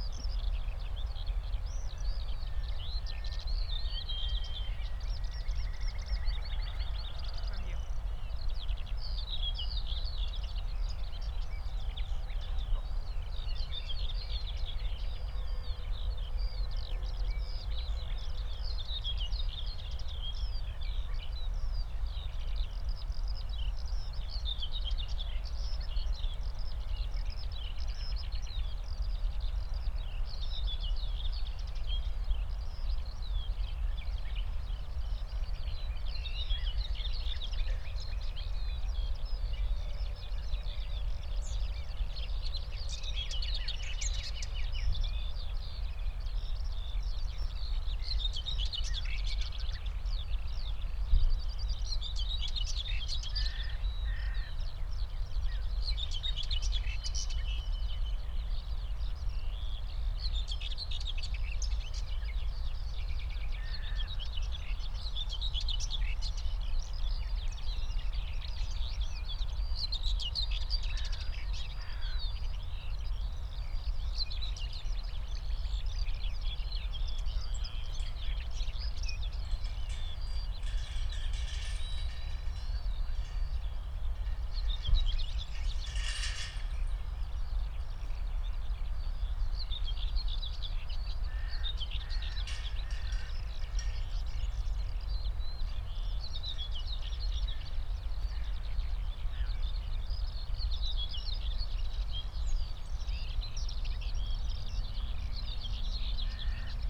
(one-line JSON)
{"title": "Tempelhofer Feld, Berlin, Deutschland - morning ambience /w Common whitethroat", "date": "2022-05-06 08:25:00", "description": "spring morning ambience at former Tempelhof airport, a Common whitethroat (Dorngrasmücke, Curruca communis) calling nearby, a Nightingale in a distance, Skylarks and others too.\n(Sony PCM D50, Primo EM272)", "latitude": "52.48", "longitude": "13.41", "altitude": "41", "timezone": "Europe/Berlin"}